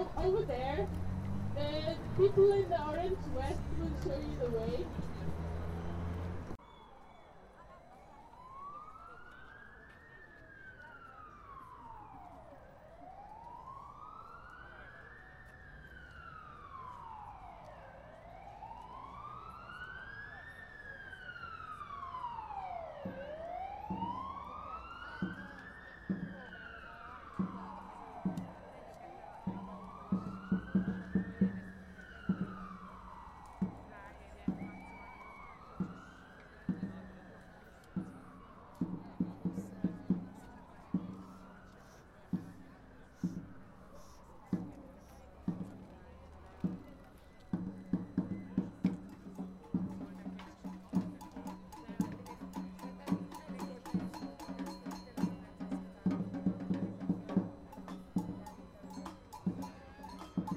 Demonstration, Budapest - Demonstration is forming
The Demonstration for Ahmed H. by Migszol (see other sounds next to this one) is forming, a samba group starts to play, shouts like 'Freedom for Ahmed'.
Rákóczi út, Hungary, 3 December 2016